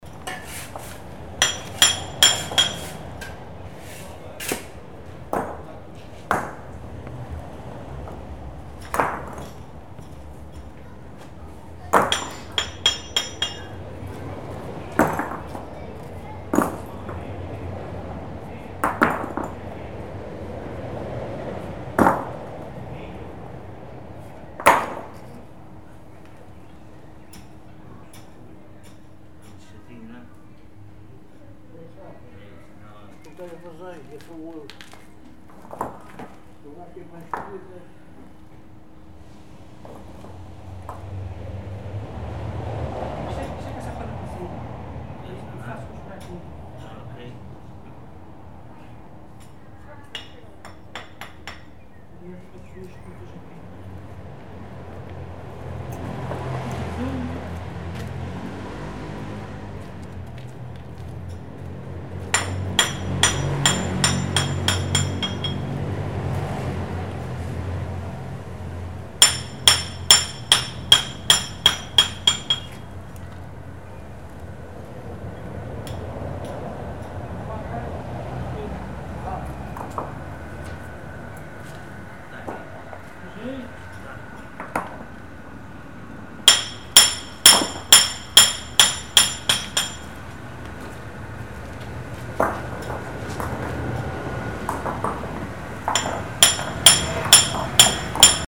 Rua de Santo Espirito, Angra do Heroísmo, Portugal - Reconstruindo a Rua Santo Espírito
Angra do Heroismo is a city whose urban layout was established in the Renaissance at the time of the Discoveries. The layout of the streets is paved as a tradition of urbanization. Here you can hear the sound of the repair of one of the sections of the Rua Santo Espírito. Recorded with Zoom Hn4 Pro.
12 July 2019, 10:00